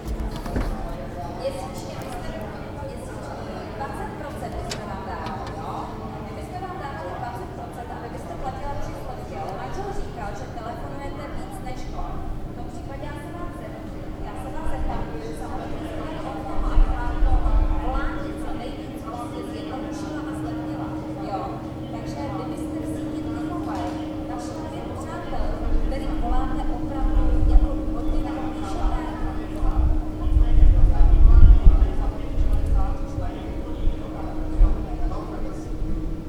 communication space skolska 28, voices from the open window
office of the telephone company with employees calling to clients